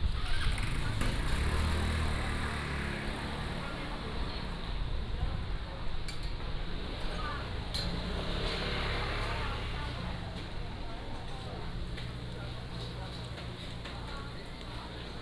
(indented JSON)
{
  "title": "Juguang Rd., Jincheng Township - Walking in the Street",
  "date": "2014-11-02 18:45:00",
  "description": "Walking in the Street, Traffic Sound",
  "latitude": "24.43",
  "longitude": "118.32",
  "altitude": "13",
  "timezone": "Asia/Taipei"
}